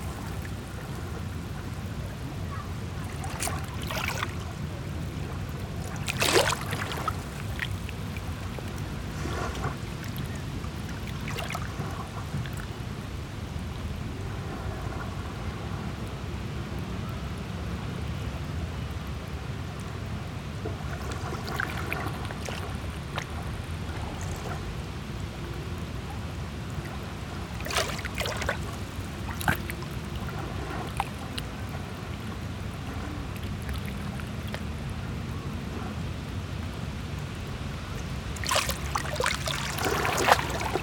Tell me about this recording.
Recording of waves at the beach. This has been done simultaneously on two pairs of microphones: MKH 8020 and DPA 4560. This one is recorded with a pair of DPA 4560, probably not a precise AB with mic hanging on the bar, on Sound Devices MixPre-6 II.